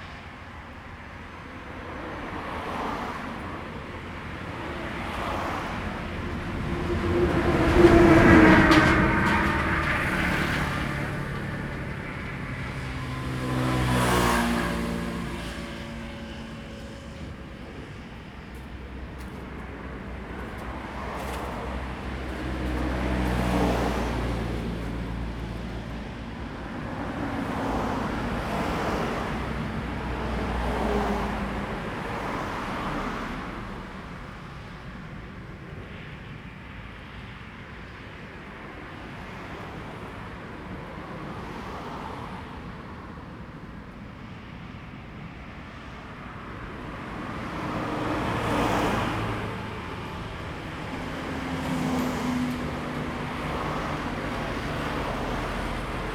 Jilin Rd., Taitung City - on the Road
Traffic Sound, Aircraft flying through, The weather is very hot
Zoom H2n MS +XY